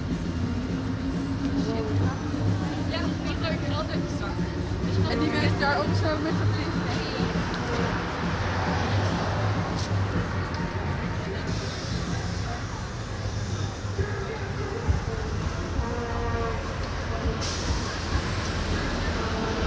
Lange Vijverberg - Koningsdag 2015 Lange Vijverberg
The annual Dutch celebration of Koningsdag (Kings day) with markets, fair and many different events. Recorded with a Zoom H2 with binaural mics.